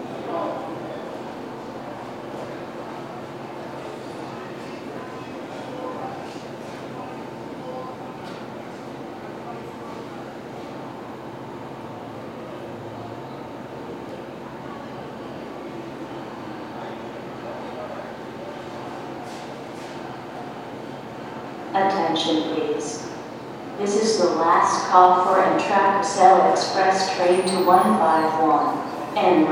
Midtown, Baltimore, MD, USA - Awaiting the Train
Recorded in Penn Station with a H4n Zoom.